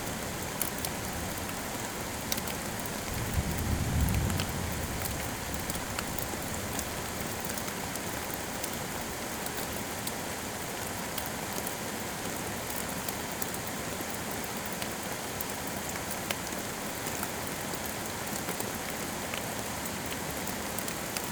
Montagnole, France - Storm
Recording of a small storm, with recorder placed in the very small hole of the entrance. We heard some deaf sounds, perhaps four or five, and thought : wow, it's seriously collapsing now somewhere in the underground mine. But, it was only thunder sounds reverberating in the tunnels. Just after the recording, a dam broke and an entiere river collapsed into the underground mine. It was terrific ! That's why on the spelunking map we had, there's a lake mentioned. No mystery, it's arriving each storm.